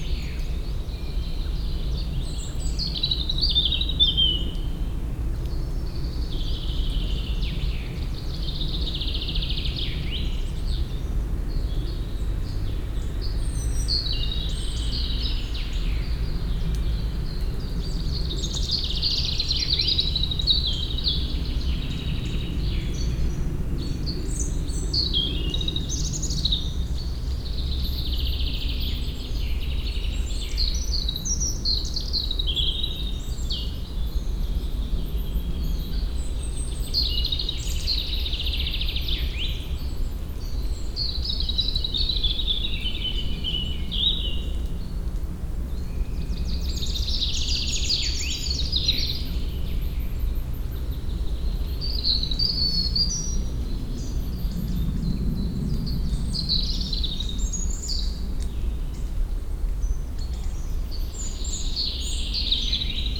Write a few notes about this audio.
(bianarual) forest activity at the border of the Morasko Nature Reserve. all treas crackling as if there are releasing pockets of air. inevitable roar of various planes.